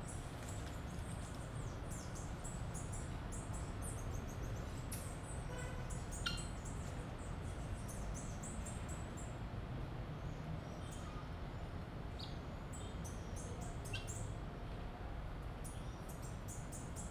Ondina, Salvador - Bahia, Brazil - A small urban farm.
The most beautiful urban garden. Mango, Passion Fruit, Coconut and Papaya Trees. Dogs, Cats, Tortoises, Turkeys and Chickens, and of course the ambience of Brazil.
- Ondina, Salvador - Bahia, Brazil, 26 May